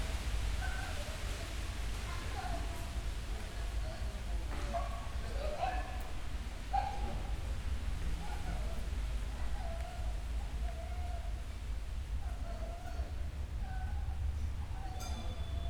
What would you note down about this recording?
Berlin, Bürknerstr., Hinterhof, narrow yard with two high trees, fresh wind and domestic sounds from open windows. (SD702, DPA4060)